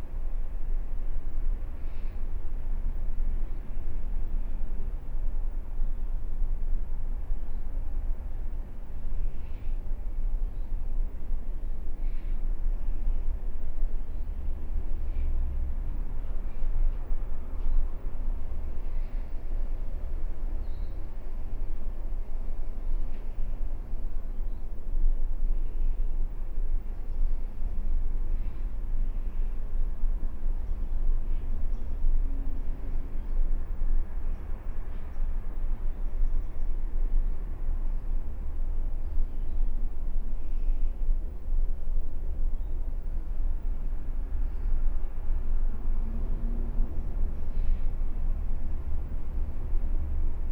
Cressingham Rd, Reading, UK - The Retreat Cabin
A ten minute meditation in the retreat cabin at the bottom of the garden of Reading Buddhist Priory (Spaced pair of Sennheiser 8020s + SD MixPre6)